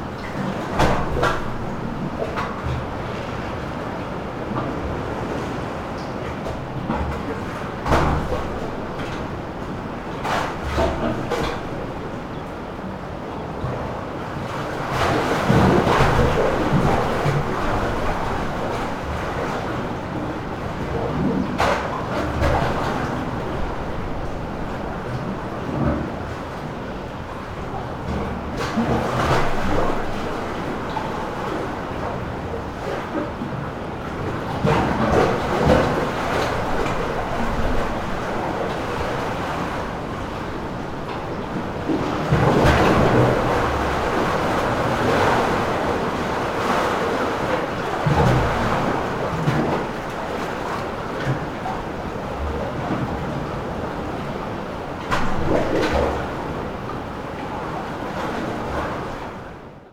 sound of waves under the pier. this harbour was destroyed by heavy winter storms years ago, shortly after it was built. it's supposedly the smallest harbour of the world.